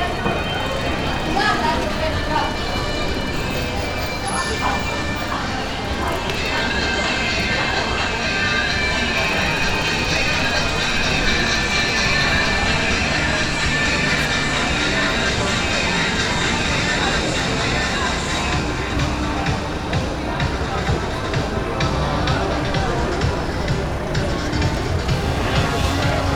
Novigrad, Croatia - evening street, late summer
28 August 2014